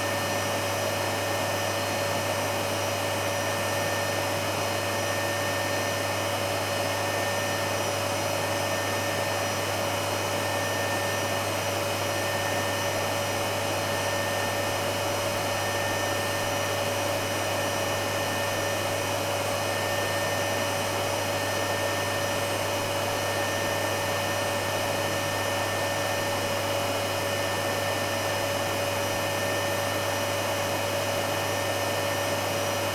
Luttons, UK - a vacuum cleaner ...
A vacuum cleaner ... recorded with Olympus LS 11 integral mics ... love the wind down of the motor when it is switched off ...